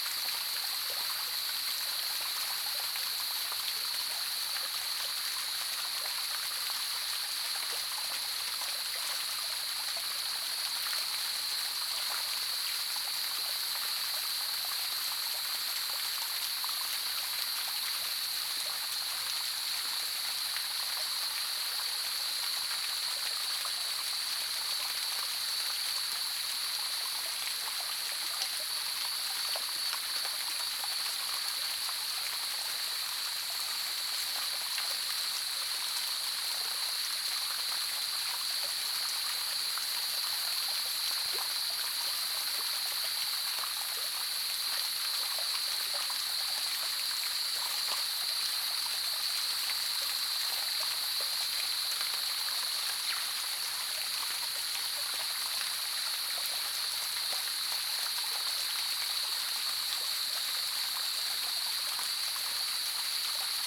華龍巷, Yuchi Township, Nantou County - Cicadas and Flow sound
Small streams, Cicadas called, Flow sound, Birds called
Zoom H2n Saprial audio
Nantou County, Yuchi Township, 華龍巷43號